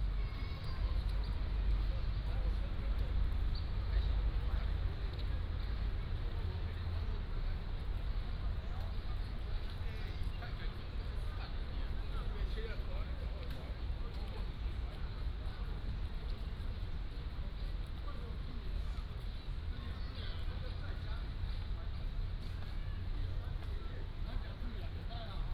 臺南公園, Tainan City - walking in the Park
in the Park, Many old people gathered, Do aerobics, Beat the foot
18 February 2017, 16:47